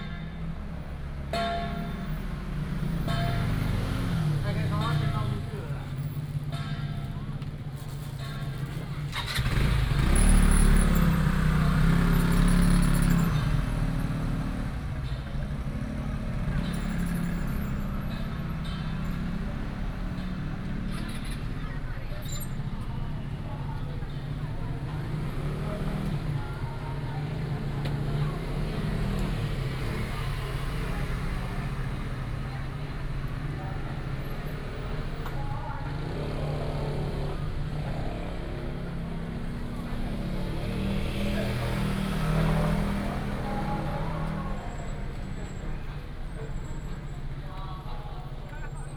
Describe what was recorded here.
temple fair, Baishatun Matsu Pilgrimage Procession, Firecrackers and fireworks